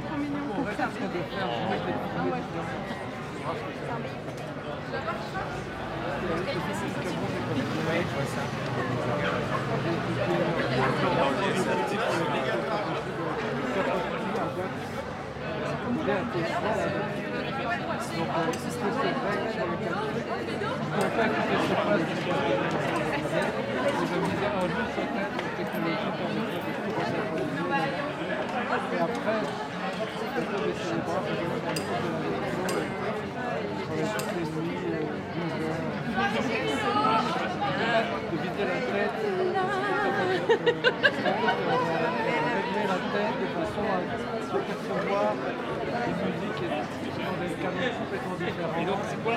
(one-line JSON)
{"title": "Super Fourchette, Rue des Hirondelles, Bruxelles, Belgique - Conversations in the street before a concert", "date": "2021-09-18 19:50:00", "description": "Tech Note : Sony PCM-D100 internal microphones, XY position.", "latitude": "50.85", "longitude": "4.35", "altitude": "27", "timezone": "Europe/Brussels"}